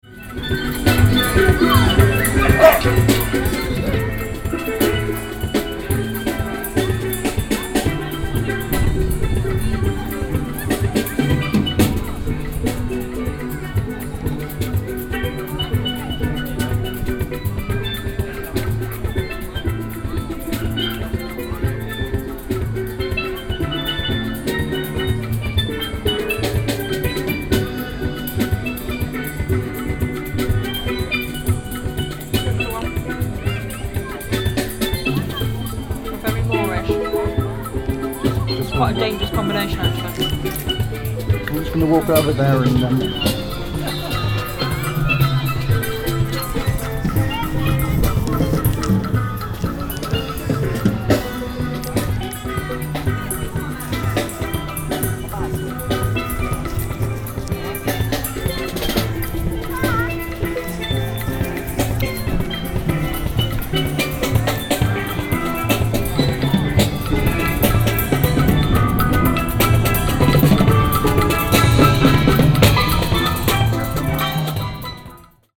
London Borough of Haringey, Greater London, UK - Fragment of Steel Band ant Highgate Wood Open Day
Recorded with Roland CS-10EM into Zoom H4N - Rosie sharing a home made jostaberry pastel with me whilst the steel band plays at Highgate Wood open day